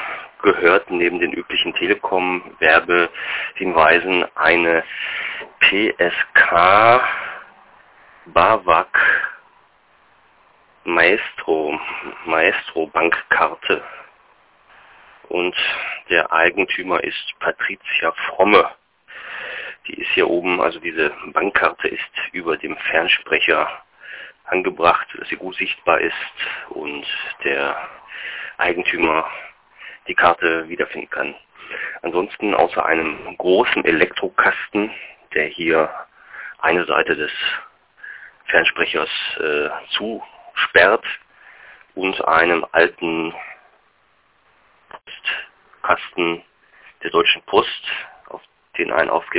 Fernsprecher Händelstraße / Lindenstraße - radio aporee ::: jetzt noch bessere verstecke ::: 15.08.2007 12:20:56